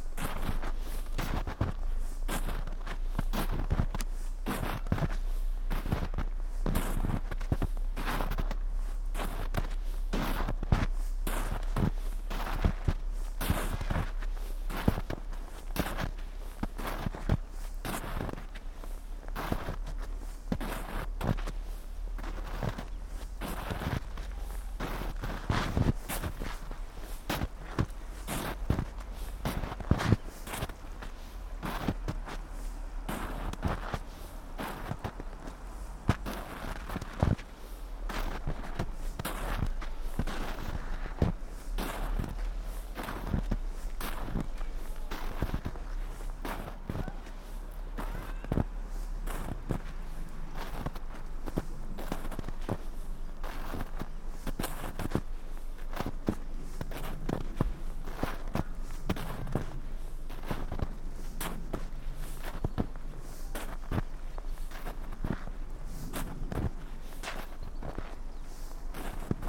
sonopoetic path, Maribor, Slovenia - walking poem
deep snow, walk, steps, small stream, distant voices, crows